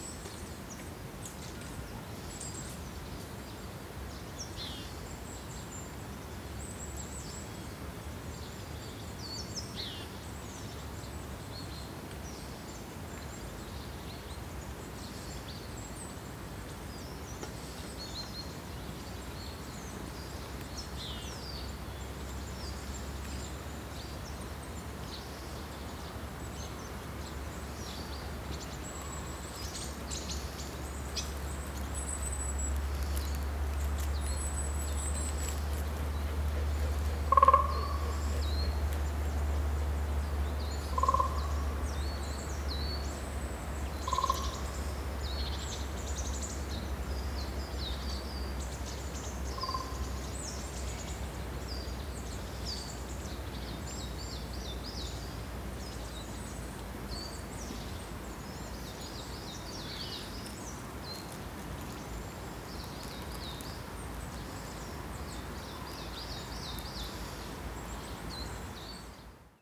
Neringos sav., Lithuania - Forest Sounds
Recordist: Tamar Elene Tsertsvadze
Description: Inside Nida's forest. Birds, insects and wind passing the trees. Recorded with ZOOM H2N Handy Recorder.
3 August 2016